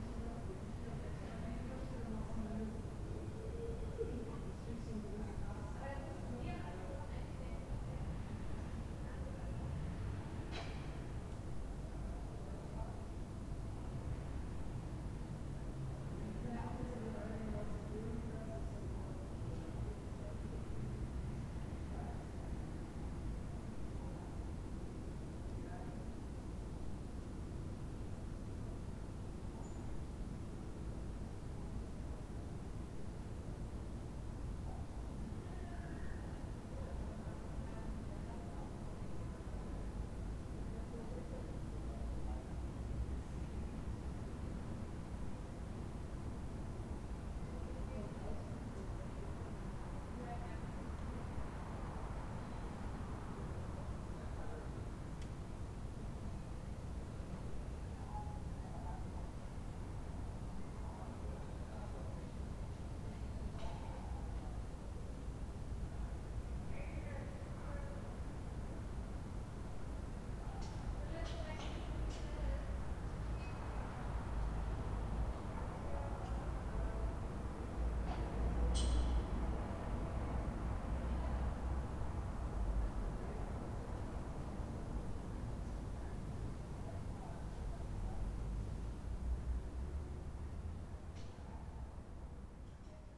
berlin: manitiusstraße/nansenstraße - the city, the country & me: people on balcony
people talking on a balcony
the city, the country & me: august 20, 2010
Berlin, Deutschland, 20 August 2010